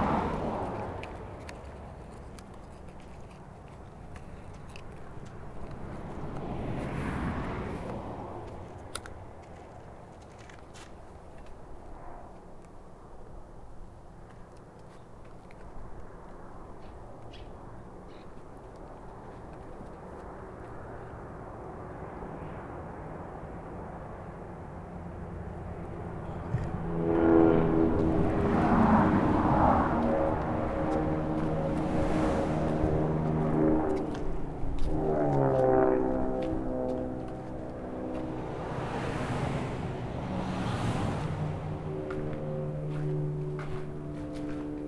here comes the train! what luck! we're very close, it gets, very loud...headphone wearers! proposal to turn down the volume! then we drive thirty five feet to where we were headed and do what we planned on doing. eka sneezing at 5:08..... much freeway traffic noises ...post malone...selah.
NM, USA, 29 June, ~14:00